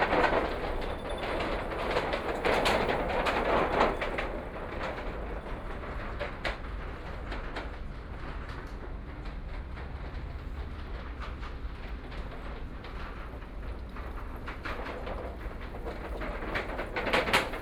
淡水區, New Taipei City - Bicycle
Riding bikes on the wooden floor, MRT trains
Please turn up the volume a little. Binaural recordings, Sony PCM D100+ Soundman OKM II
New Taipei City, Danshui District, 竿蓁林, April 2014